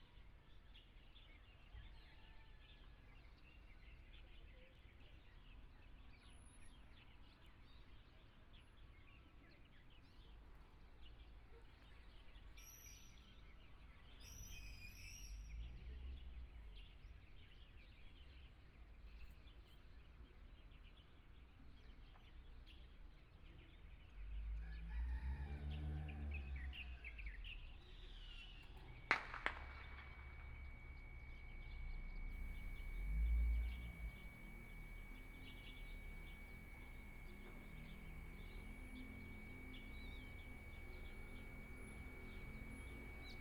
雲林縣水林鄉蕃薯村 - Environmental sounds
small Town, Broadcast Sound, Birds singing, Pumping motor sound, The sound of firecrackers, Binaural recordings, Zoom H4n+ Soundman OKM II
1 February 2014, 07:56